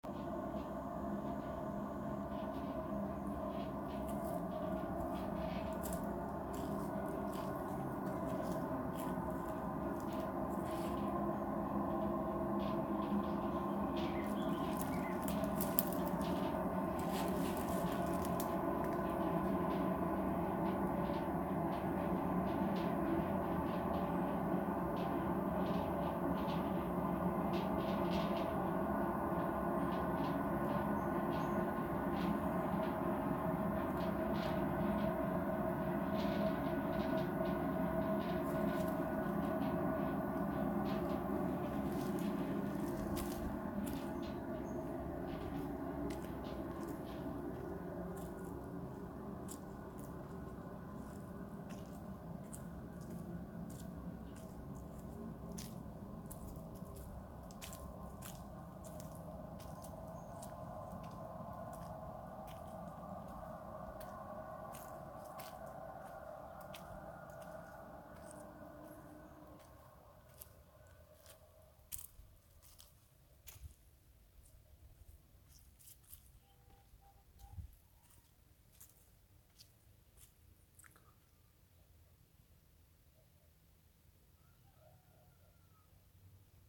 cette canalisation fait un bruit assez remarquable (une musicalité qui pourrait servir pour un bruitage)
Réunion, 11 March 2019